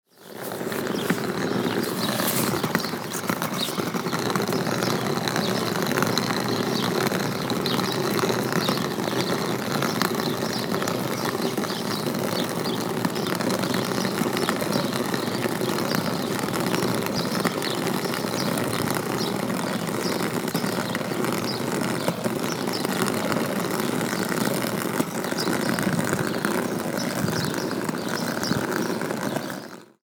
Pilot case on cobblestones, birdsong, Wolfgang Windgassen-way, Pilotenkoffer auf Kopfsteinplaster, Vogelgezwitscher, Wolfgang-Windgassen-Weg, Stuttgart
Stuttgart, Germany, June 2012